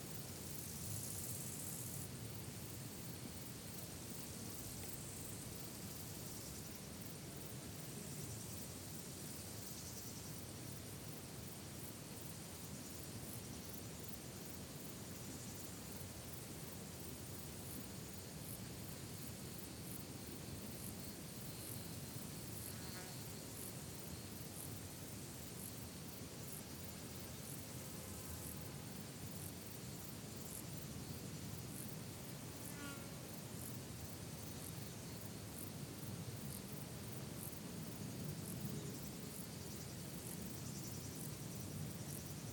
Sigale, Frankreich - Boulevard du Cross, Alpes-Maritimes - Life in a meadow, some cars passing by
Boulevard du Cross, Alpes-Maritimes - Life in a meadow, some cars passing by.
[Hi-MD-recorder Sony MZ-NH900, Beyerdynamic MCE 82]